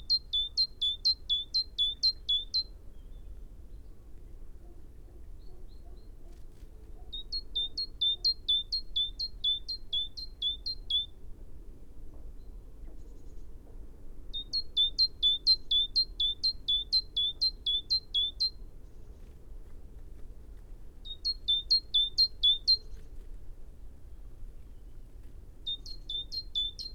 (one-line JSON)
{"title": "Luttons, UK - Great tit territory ...", "date": "2017-01-27 07:17:00", "description": "Great tit territory ... calls and song from a bird as the breeding season approaches ... lavalier mics in a parabolic ... background noise ...", "latitude": "54.13", "longitude": "-0.58", "altitude": "113", "timezone": "Europe/Berlin"}